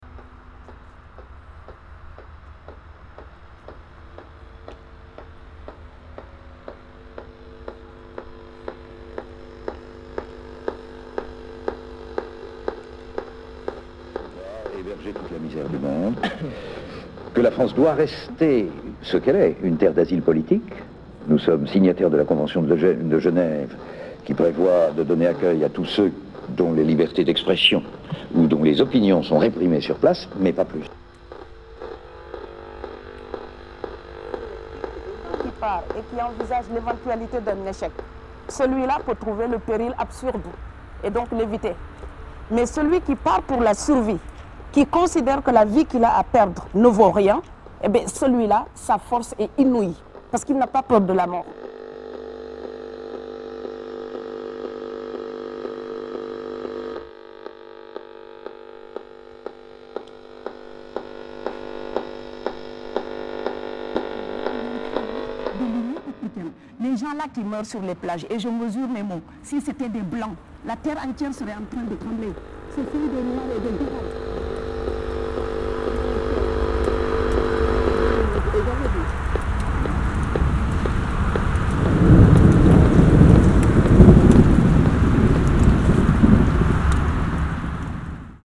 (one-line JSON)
{"title": "Sound of Radio, performance, Toulouse, France - Extract of a sound performance in this wasteland", "date": "2017-12-16 20:33:00", "description": "An extract of the sound performance which proposes sound in headphones mixed by the field recording of this specific place of the waste ground. During the exhibition #Creve Hivernale#", "latitude": "43.63", "longitude": "1.48", "altitude": "138", "timezone": "GMT+1"}